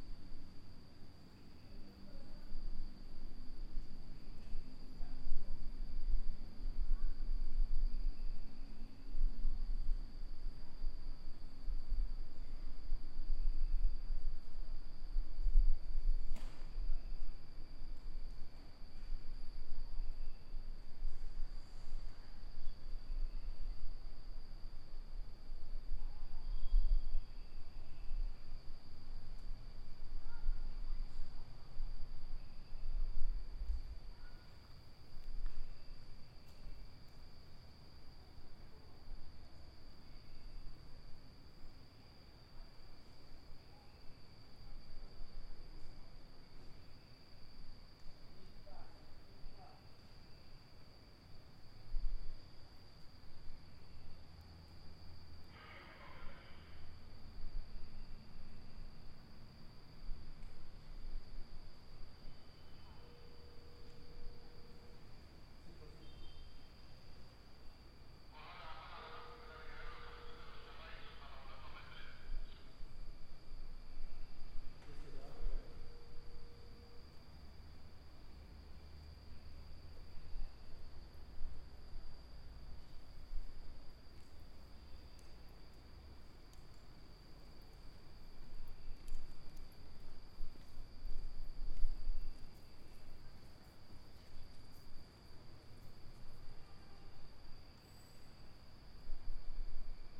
Cra., Medellín, Belén, Medellín, Antioquia, Colombia - Noche
Un parqueadero casi sin vehículos, podría ser porque los dueños de estos bienes se encuentran
horrorizados por el mugre y suciedad que causa la caída de material orgánico por parte del bosque
que se encuentra al lado izquierdo de la foto.
September 2022